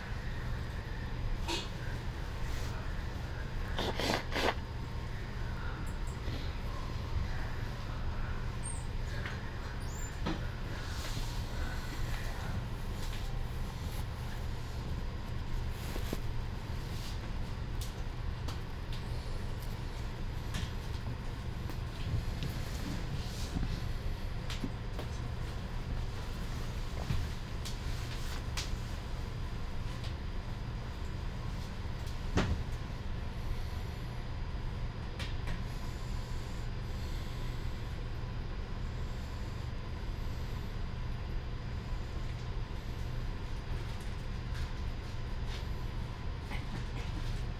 Ascolto il tuo cuore, città, I listen to your heart, city, Chapter CXXXII - "Two years after the first soundwalk in the time of COVID19": Soundwalk
"Two years after the first soundwalk in the time of COVID19": Soundwalk
Chapter CLXXXVIII of Ascolto il tuo cuore, città. I listen to your heart, city
Thursday, March 10th, 2022, exactly two years after Chapter I, first soundwalk, during the night of closure by the law of all the public places due to the epidemic of COVID19.
This path is part of a train round trip to Cuneo: I have recorded the walk from my home to Porta Nuova rail station and the start of the train; return is from inside Porta Nuova station back home.
Round trip are the two audio files are joined in a single file separated by a silence of 7 seconds.
first path: beginning at 6:58 a.m. end at 7:19 a.m., duration 20’33”
second path: beginning at 6:41 p.m. end al 6:54 p.m., duration 13’24”
Total duration of recording 34’04”
As binaural recording is suggested headphones listening.
Both paths are associated with synchronized GPS track recorded in the (kmz, kml, gpx) files downloadable here:
first path:
second path: